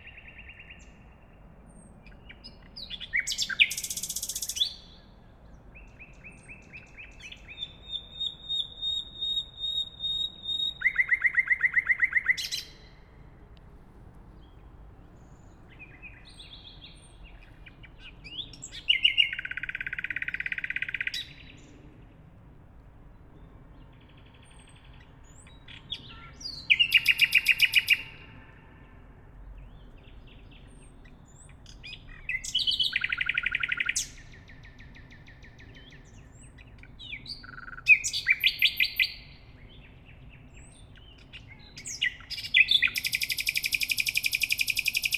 {"title": "Heidekampweg, Berlin, Deutschland - Nightingale", "date": "2022-04-28 23:30:00", "description": "A Nightingale sings in front of the microphone, others are audible left and right in a distance.\n(Tascam DR-100, Audio Technica BP4025)", "latitude": "52.47", "longitude": "13.48", "altitude": "36", "timezone": "Europe/Berlin"}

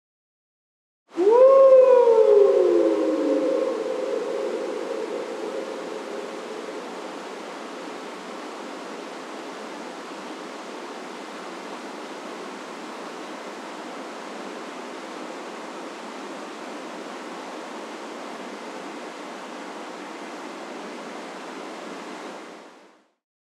{"title": "Walking Holme Tunnel", "date": "2011-04-19 02:15:00", "description": "Calling down the tunnel", "latitude": "53.56", "longitude": "-1.83", "altitude": "221", "timezone": "Europe/London"}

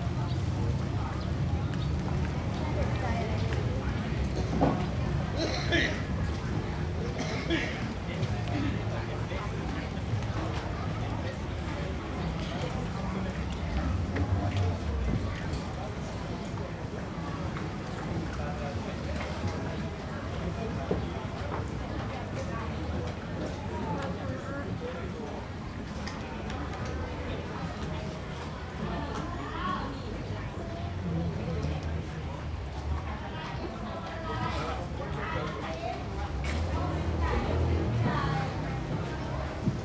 {"title": "Damnoen Saduak, Amphoe Damnoen Saduak, Ratchaburi, Thailand - drone log 12/03/2013", "date": "2013-03-12 10:19:00", "description": "damnoen saduak floating market\n(zoom h2, build in mic)", "latitude": "13.52", "longitude": "99.96", "altitude": "9", "timezone": "Asia/Bangkok"}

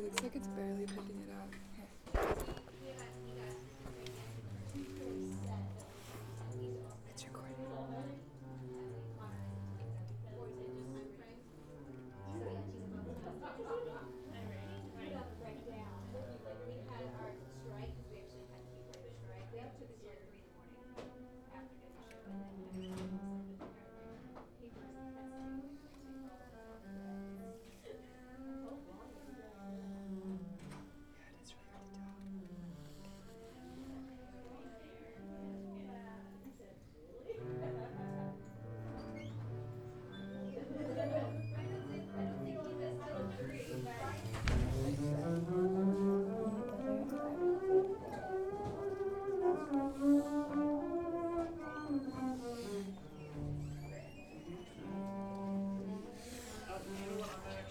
{"title": "University of Colorado Boulder, Regent Drive, Boulder, CO, USA - Sounds from the University Music Building", "latitude": "40.01", "longitude": "-105.27", "altitude": "1646", "timezone": "America/Denver"}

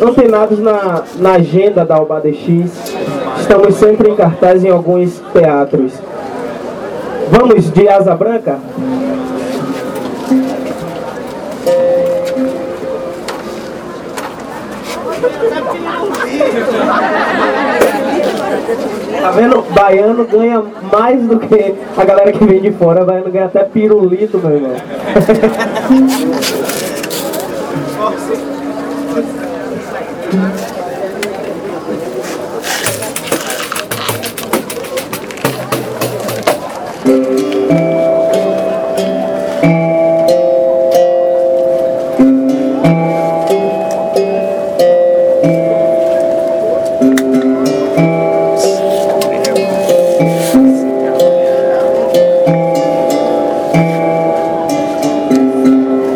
{"title": "Brazil, Bahia, Salvador - Artistas de Rua - Berimbaus Afinados", "date": "2014-03-16 19:51:00", "description": "Caminhando pela orla da praia da Barra em Salvador, me deparo com vários artistas de rua tocando, cantando, brincando, atuando, recitando poesia. Esse áudio é de uma orquestra de berimbaus afinados chamado Oba DX.\nGravado com um simples gravador de mão Sony ICD PX312", "latitude": "-13.01", "longitude": "-38.53", "altitude": "8", "timezone": "America/Bahia"}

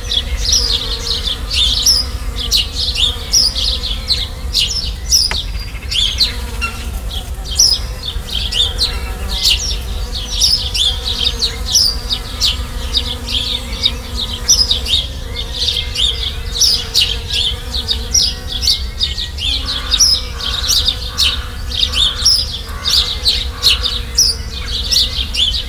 {"title": "Fruitlands, Malvern, UK - Birds, Bees, 2 Trains and a Car", "date": "2017-07-16", "description": "Recorded outside the front window with Sound Devices 744 and a pair of DPA 4060 Omni Mics. Loads of Bee's buzzing in the bush and some birds. A train pulls up to wait by the tunnel through the Malvern Hills, a car drives past then another train.", "latitude": "52.09", "longitude": "-2.33", "altitude": "109", "timezone": "Europe/London"}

